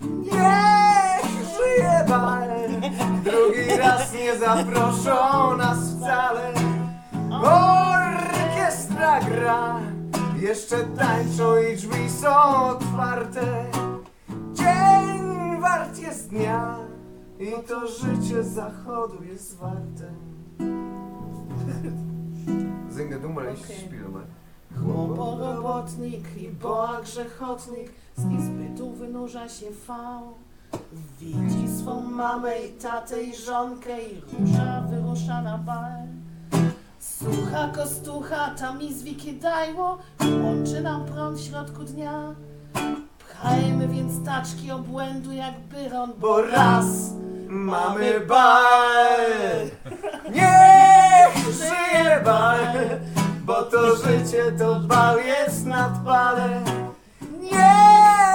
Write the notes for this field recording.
…and continuing till dawn… more songs at